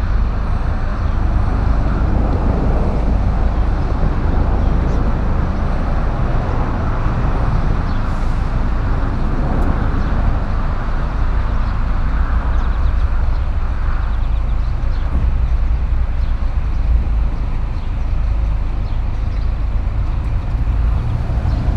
E411 highway, Aire de Wanlin.